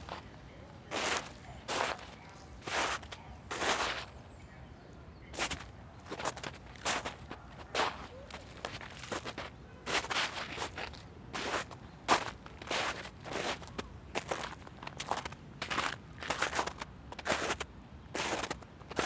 Woodbine St, Queens, NY, USA - Walking on snow at Rosemary's Playground
Walking on a blanket of snow and ice at Rosemary's Playground.